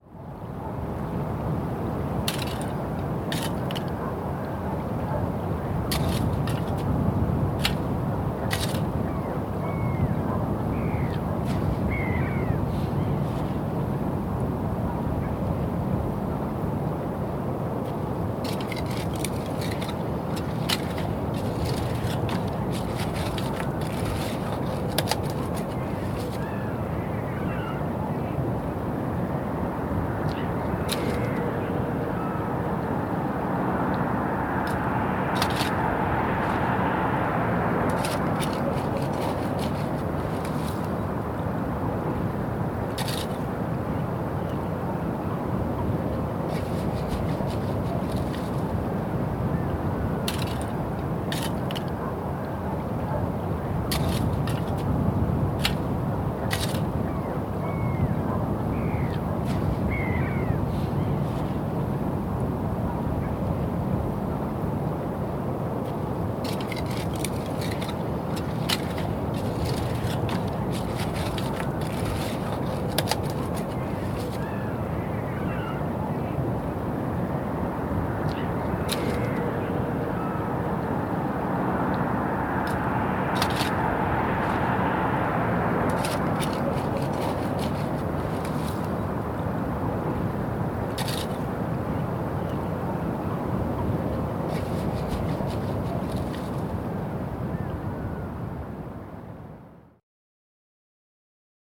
R. da Praia, Vila Nova de Gaia, Portugal - Apanha de bivalves na Foz do Douro
Apanha de bivalves na Foz do Douro Mapa Sonoro do Rio Douro Digging for bivalves in Douros estuary. Douro River Sound Map